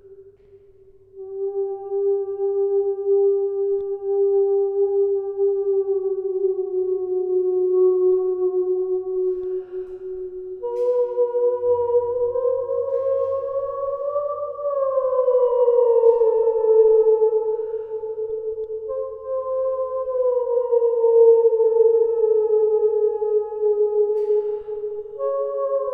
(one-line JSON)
{"title": "chamber cistern, wine cellar, Maribor - voice, echo, space", "date": "2014-10-10 11:22:00", "latitude": "46.56", "longitude": "15.65", "altitude": "274", "timezone": "Europe/Ljubljana"}